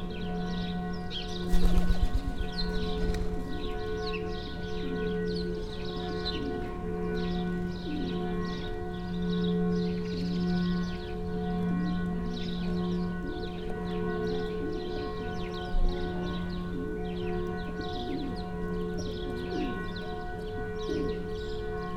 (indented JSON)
{
  "title": "Mariánske námestie, Žilina, Slovensko - Mariánske námestie, Žilina, Slovakia",
  "date": "2020-03-28 11:58:00",
  "description": "Almost empty square because of quarantine.",
  "latitude": "49.22",
  "longitude": "18.74",
  "altitude": "347",
  "timezone": "Europe/Bratislava"
}